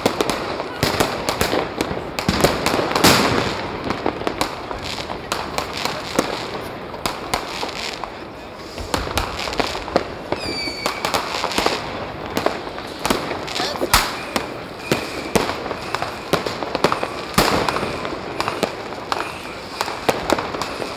River Spree, Kreuzberg, Berlin, Germany - NYE / Sylvester Celebrations Jan 1st 2017
Tens of thousands of people on the banks of the river Spree letting off fireworks to celebrate New Years Eve / Day. VERY loud / intense - a lot of fireworks!
Binaural recording direct to a Zoom HN4.
Some level adjustment and EQ made in Logic Studio.